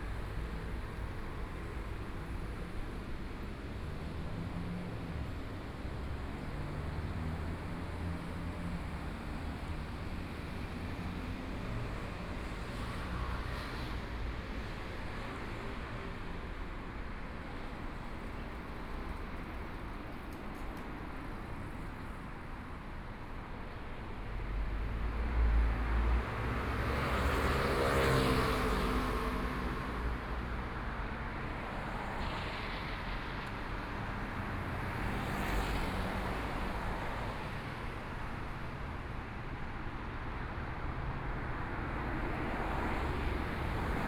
Mingshui Rd., Taipei City - At the intersection
Traffic Sound, Sunny mild weather
Please turn up the volume
Binaural recordings, Zoom H4n+ Soundman OKM II